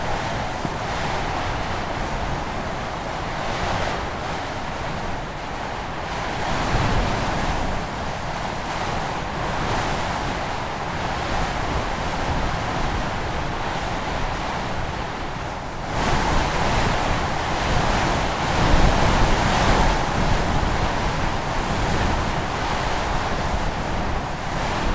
Vebron, France - Gargo mount
Climbing the Gargo mount. This is the most powerful wind I ever knew, with 130 km/h wind and 180 km/h bursts. I had to creep as it was strictly impossible to walk. It was, for sure, a beautiful place !